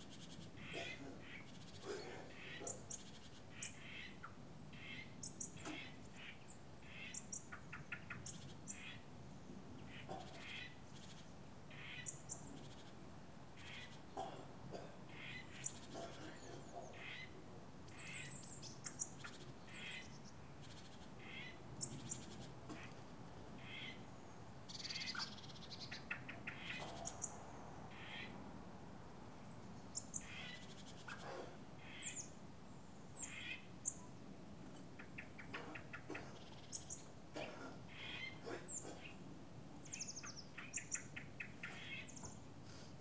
Beselich Niedertiefenbach - backyard, morning ambience
quiet morning, birds, sounds from inside
(Sony PCM D50)